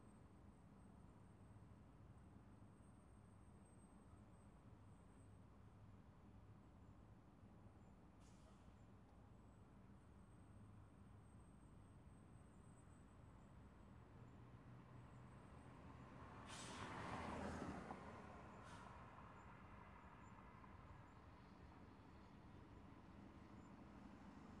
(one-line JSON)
{"title": "University, Windsor, ON, Canada - Under Ambassador Bridge", "date": "2015-10-24 04:15:00", "description": "Transport sounds from underneath Windsor's Ambassador Bridge.", "latitude": "42.31", "longitude": "-83.07", "altitude": "182", "timezone": "America/Detroit"}